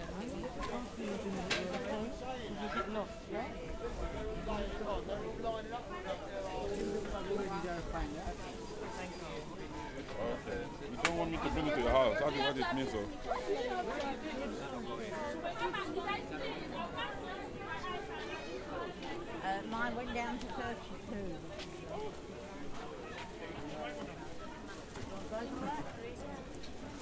London Borough of Southwark, Greater London, UK - East Street Market - Elephant & Castle
A walk through the market
1 November 2012